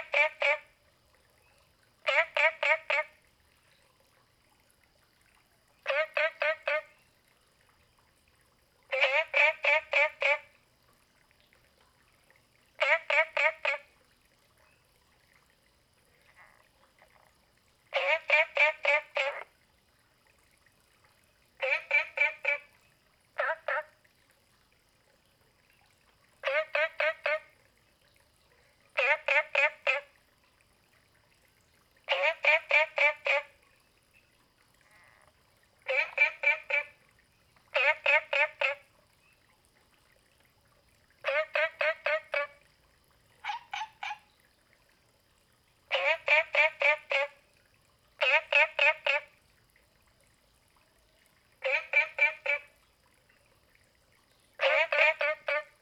Green House Hostel, Puli Township - Frogs chirping
Frogs chirping, at the Hostel
Zoom H2n MS+XY
Puli Township, Nantou County, Taiwan, 28 April 2015